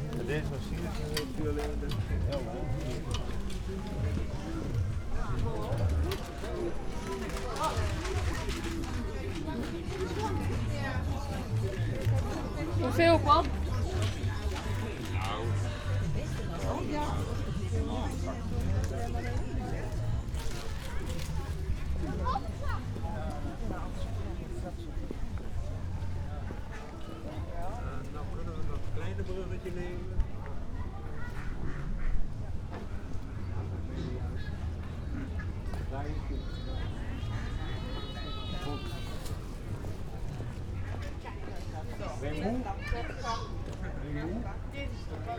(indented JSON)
{
  "title": "balk: radhuisstraat/van swinderen straat - the city, the country & me: sound walk",
  "date": "2015-07-24 17:35:00",
  "description": "evening market, sound walk\nthe city, the country & me: july 24, 2015",
  "latitude": "52.90",
  "longitude": "5.58",
  "altitude": "1",
  "timezone": "Europe/Amsterdam"
}